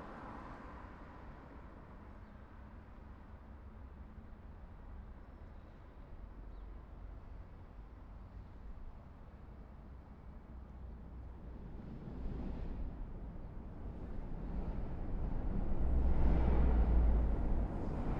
{"title": "Las Palmas, Gran Canaria, above the traffin tunnell", "date": "2017-01-24 10:10:00", "latitude": "28.14", "longitude": "-15.43", "altitude": "8", "timezone": "Atlantic/Canary"}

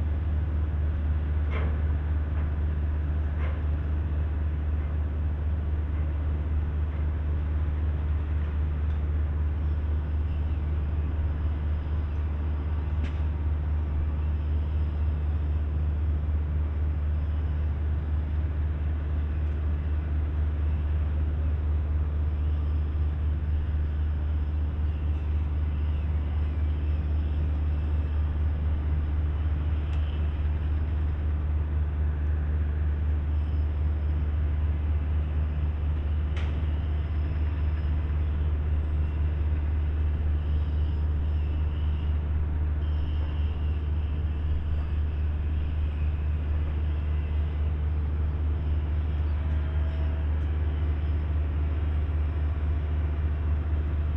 {"title": "Pesch, Erkelenz, Garzweiler II - at the edge of the coal mine", "date": "2012-04-03 15:40:00", "description": "soundscape at the edge of the coal mining, drones from a distant huge bucket-wheel excavator at work.", "latitude": "51.06", "longitude": "6.46", "altitude": "95", "timezone": "Europe/Berlin"}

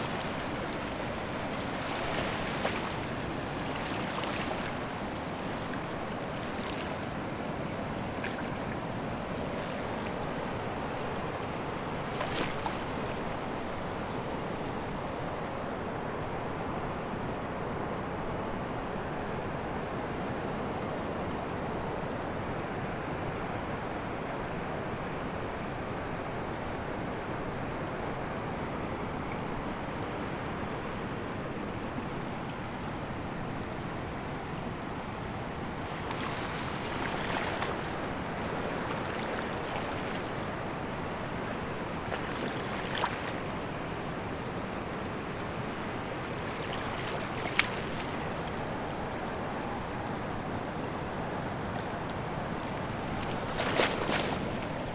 {"title": "Praia Magoito, Sintra, Portugal, waves on rocks", "date": "2010-09-11 13:20:00", "description": "waves breaking on the rocks, Praia do Magoito, Ericeira, Sintra, water", "latitude": "38.86", "longitude": "-9.45", "altitude": "11", "timezone": "Europe/Lisbon"}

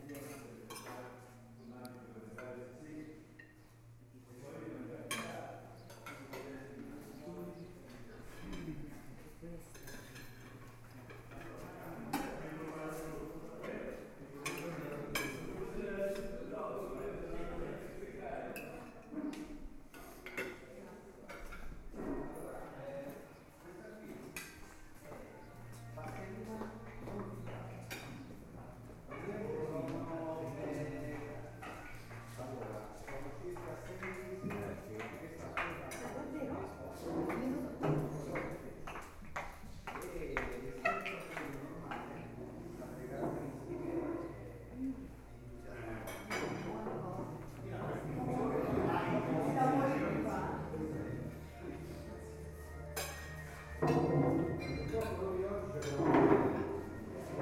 {"title": "foodgallery-convivio 2 - waiting to start eating", "date": "2013-06-22 14:02:00", "latitude": "43.01", "longitude": "13.77", "altitude": "330", "timezone": "Europe/Rome"}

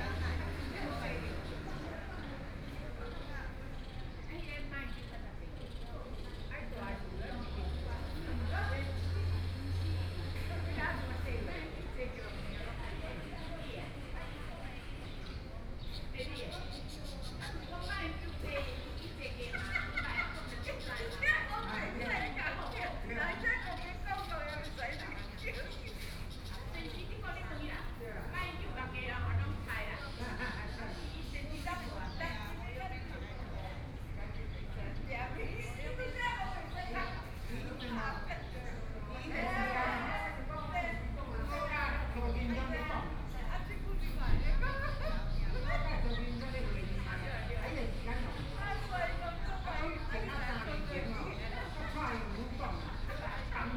{"title": "XinLu Park, Taipei City - in the Park", "date": "2014-04-27 10:35:00", "description": "in the Park, Birds singing, Group of woman chatting\nSony PCM D50+ Soundman OKM II", "latitude": "25.07", "longitude": "121.53", "altitude": "10", "timezone": "Asia/Taipei"}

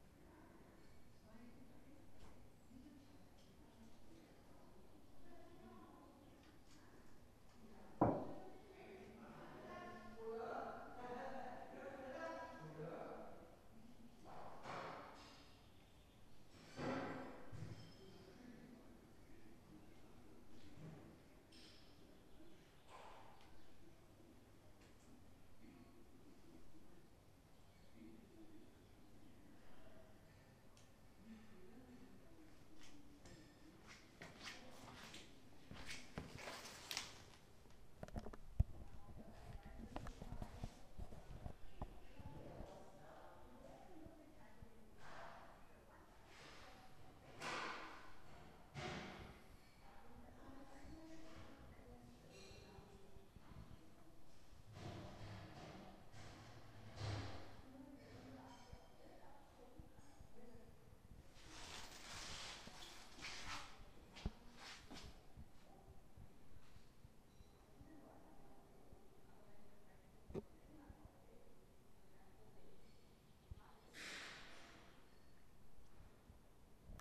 {"title": "Bad Salzuflen, Deutschland - Dinner at Waldheim", "date": "2013-06-27 18:30:00", "description": "The ladies living at the Waldheim, Bad Salzuflen, having dinner. The staircase making the soundscape oddly shifting.", "latitude": "52.10", "longitude": "8.73", "altitude": "127", "timezone": "Europe/Berlin"}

